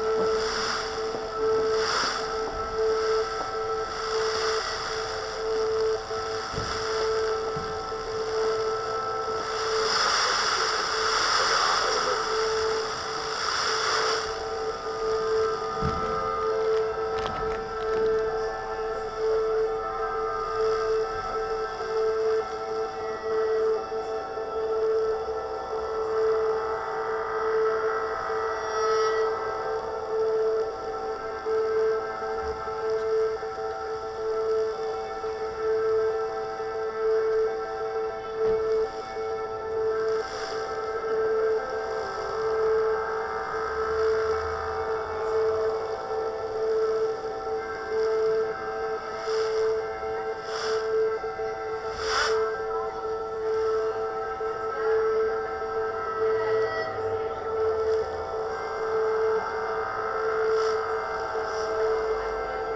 transistor radio on the pavement during the transmission of the aporee event >standing waves< by HOKURO on fm 100
HOKURO are Sachiyo Honda, Sabri Meddeb, Michael Northam (accordion, objects, strings, winds, voices and electronics)
... we invite you to participate by playing with us on any kind of instrument or voice that can sustain an A or E or equalivant frequency - the idea is to try to maintain and weave inside a river of sound for as long as possible ... (from the invitation to the concert at radio aporee berlin, Nov. 28 2009)
Berlin, Germany